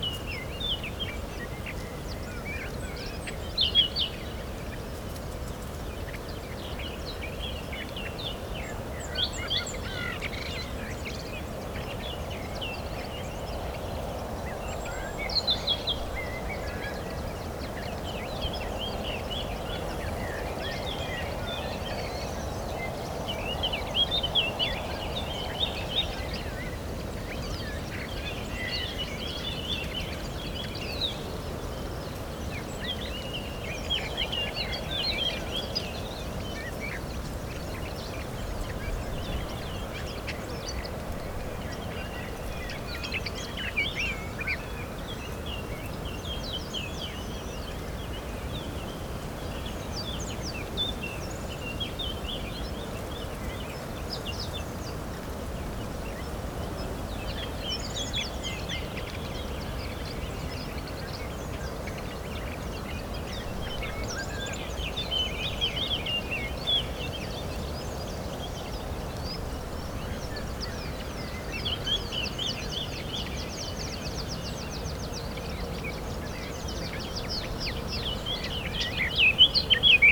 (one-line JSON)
{"title": "Güímar, Santa Cruz de Tenerife, España - Chamoco", "date": "2013-03-23 11:30:00", "description": "Birds and wind in Barranco de Badajoz (Chamoco) with Sound Devices 702 with rode NT55 binaural.", "latitude": "28.30", "longitude": "-16.46", "altitude": "434", "timezone": "Atlantic/Canary"}